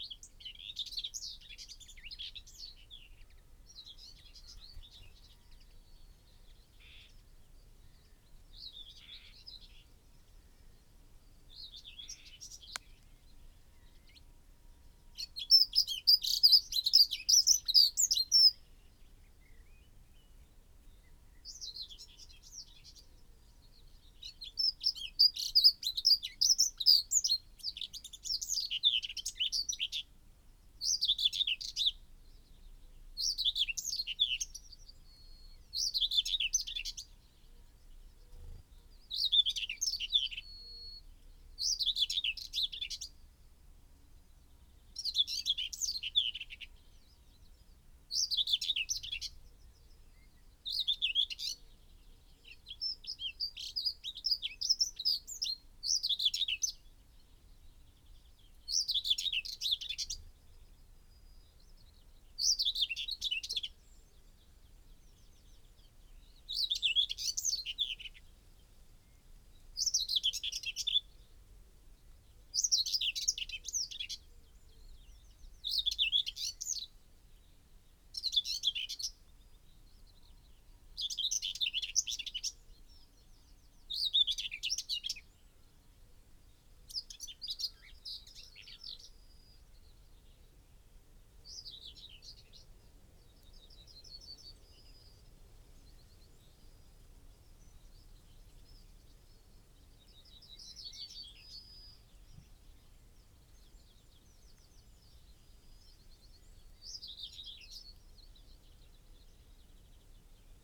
whitethroat song soundscape ... dpa 4060s clipped to bag to zoom h5 ... bird calls ... song ... from ... yellowhammer ... blackbird ... linnet ... crow ... wren ... dunnock ... chaffinch ... blackcap ... wood pigeon ... possible nest in proximity as song and calls ... male visits various song posts before returning ... occasional song flight ... unattended time edited extended recording ...